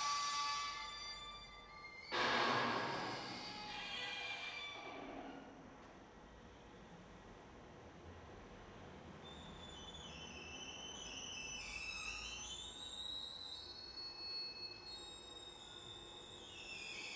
Breiter Gang. Kranaufrichtung, 7.8.2009
Hamburg, Germany